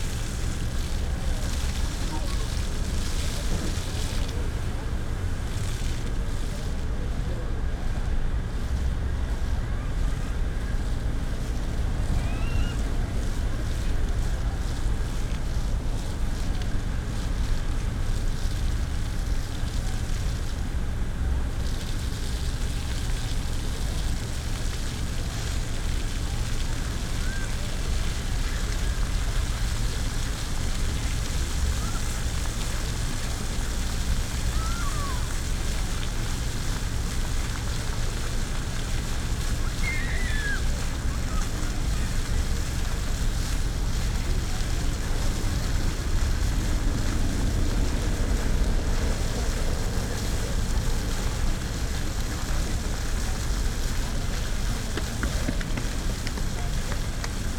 Malbork, Poland, at the fountain
13 August 2014